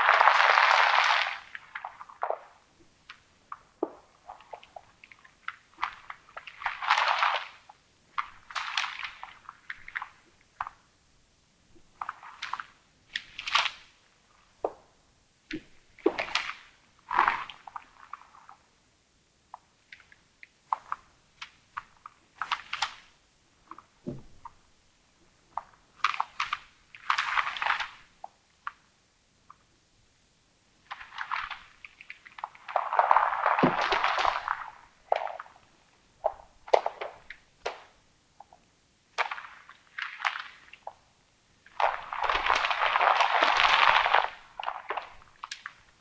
Mont-Saint-Guibert, Belgique - Earthworms eating
On the all-animals-eating collection, this strange recording is about earthworms. These are earthworms eating. There's normally no noise or quite nothing with earthworm, but I was completely atracted to disclose their secret life. So, to succeed, I made a strategy. I buried two adjoined contact microphones in a very packed mold. Around the microphones, I disposed a layer of coffee ground, as I know earthworm love wet and cold coffee ground. It was a trap and a gift to them, in aim to attract them near the microphones. I deposited three nervous earthworm on the summit of a jam jar. I let them dig in the mold. They immediatly plunged near the coffee and eat it. It makes this extremely strange sound.
On this recording, I made no alteration, but in fact I really can't explain why it makes these kind of noises. Perhaps ground small collapses and crushing is a kind of important thing in a earthworm existence.